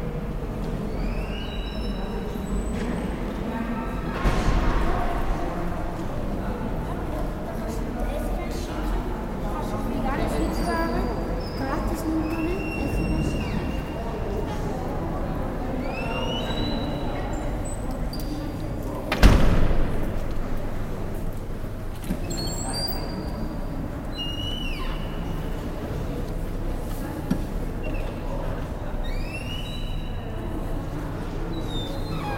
St. Gallen (CH), main station, hall
recorded june 16, 2008. - project: "hasenbrot - a private sound diary"